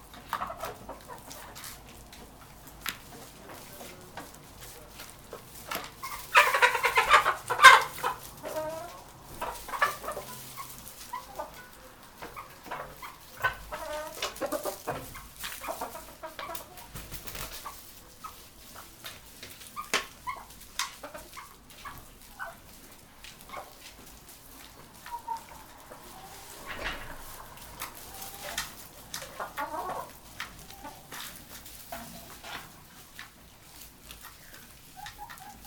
{"title": "Court-St.-Étienne, Belgique - Chicken life", "date": "2017-02-07 10:59:00", "description": "Recording of the chicken secret life during one hour. I put a recorder in a small bricks room, where chicken are, and I went elsewhere.", "latitude": "50.61", "longitude": "4.53", "altitude": "83", "timezone": "GMT+1"}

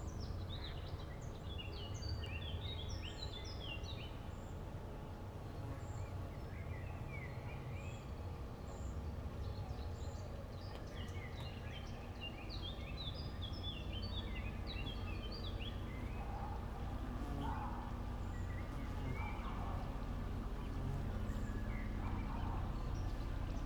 {"title": "Labussee, Canow, Deutschland - lake ambience /w aircraft and helicopter", "date": "2019-07-11 15:30:00", "description": "Labussee, on a boat, lake ambience, an aircraft crossing at 1:30, at 3:30 increasing drone of a helicopter, wiping out all other sounds.\n(Sony PCM D50)", "latitude": "53.20", "longitude": "12.89", "altitude": "55", "timezone": "Europe/Berlin"}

